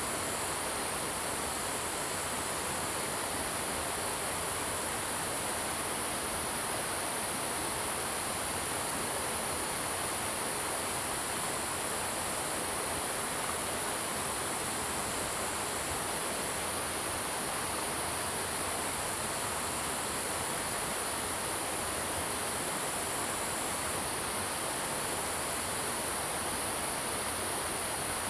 投68鄉道, 埔里鎮桃米里 - Sound of streams and insects
Stream, Sound of insects, Traffic Sound
Zoom H2n MS+XY